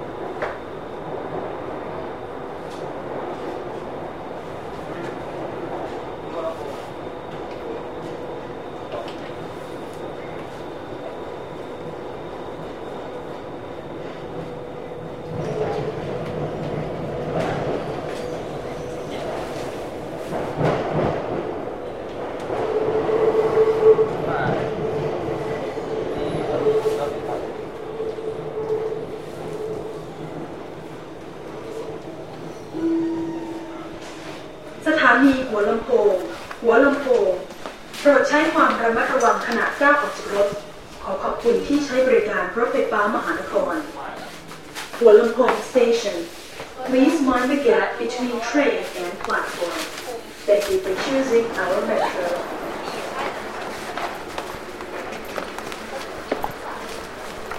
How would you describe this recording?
MRT Hua Lamphong in Bangkok, Thailand, 24, Jan, 2010